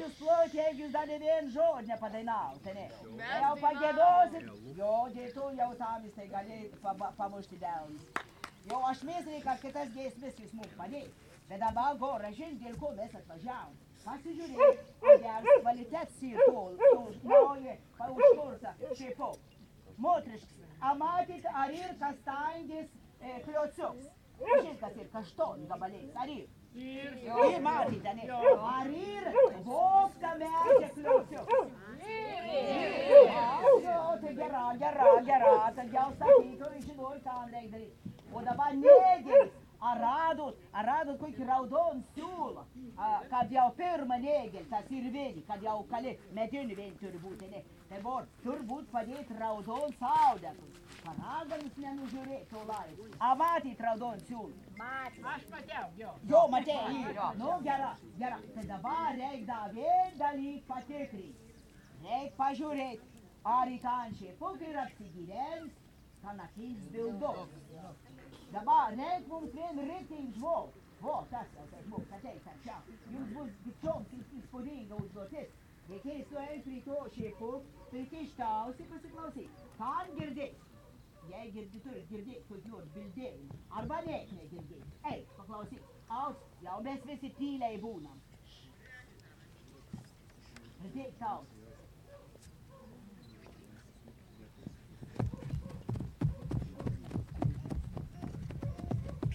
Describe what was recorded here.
The celebration of new boat launching.